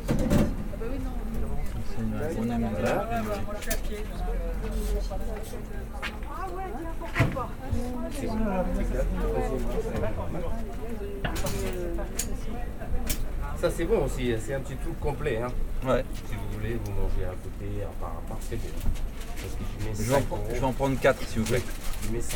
Near the cinema, there's a food truck with a long waiting line : this could be a good presage for good food ! Indian people prepair indian wrap food. Into the line, I'm waiting to buy my meal. Some persons speak about the good food, some other the next film upcoming. It's a classical ambience of the Tours city, outside from the touristic places.
Tours, France